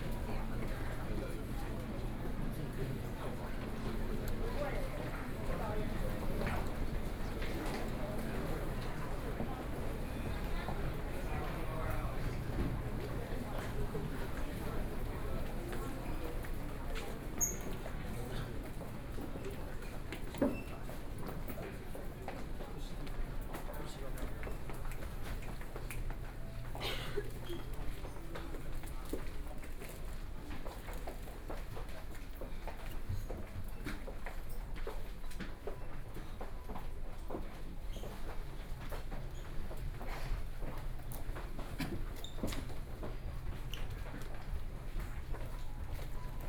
Eslite Bookstore, Da’an Dist. - inside the bookstore
Walking inside the bookstore
Binaural recordings, Sony PCM D50